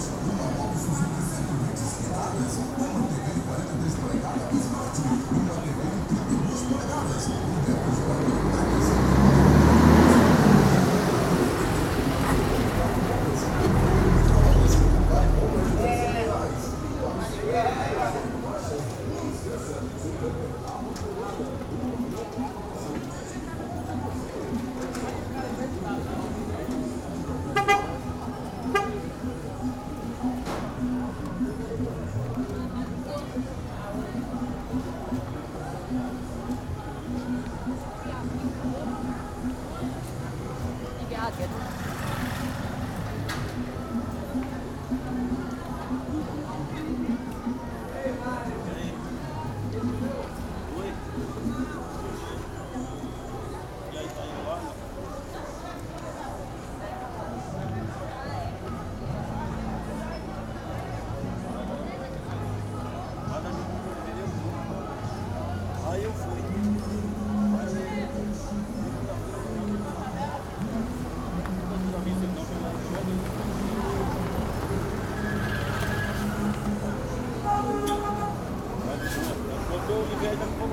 {"title": "R. Prisco Paraíso, Cachoeira - BA, 44300-000, Brasil - Caixas de som e carros, dia de feira - Speakers and cars, market day", "date": "2018-01-27 09:00:00", "description": "Dia de feira, movimento no centro de Cachoeira.\nMarket day, movement in the center of Cachoeira city.\nRecorded with: int. mic of Tascam dr100", "latitude": "-12.60", "longitude": "-38.96", "altitude": "8", "timezone": "America/Bahia"}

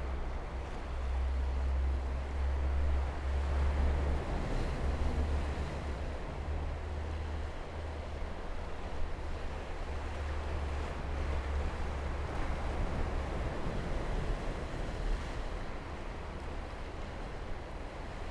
{"title": "Hualien, Taiwan - Sound of the waves", "date": "2013-11-05 13:16:00", "description": "Sound of the waves, Cloudy day, Zoom H4n +Rode NT4+ Soundman OKM II", "latitude": "23.97", "longitude": "121.61", "altitude": "7", "timezone": "Asia/Taipei"}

